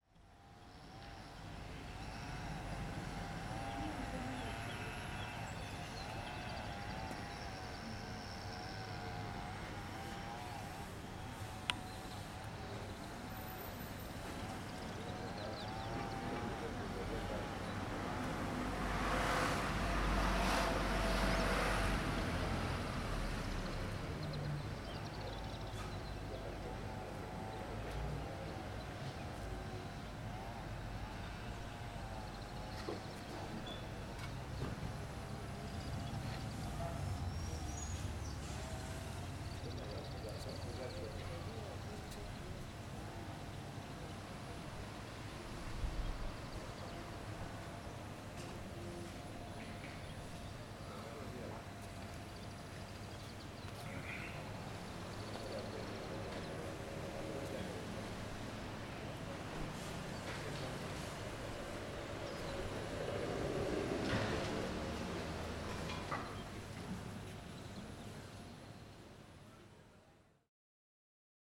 Plateia Neou Frouriou, Corfu, Greece - New Fortress Square - Πλατεία Νέου Φρουρίου
People are talking. The sound of a vacuum cleaner coming from a nearby bar. The square is situated next to Xenofontos street.